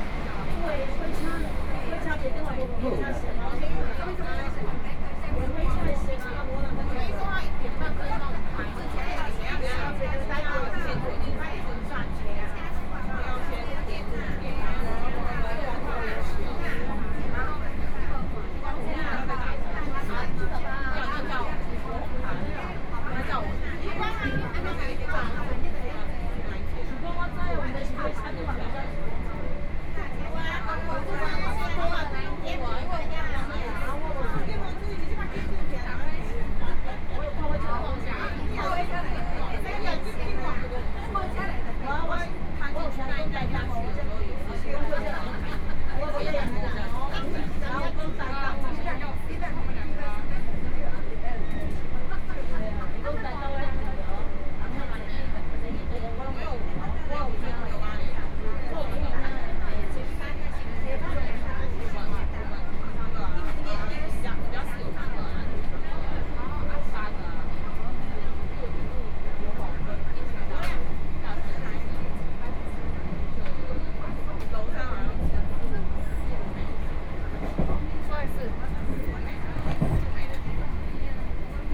Chu-Kuang Express, from Pingtung station to Chaozhou station
Pingtung County, Taiwan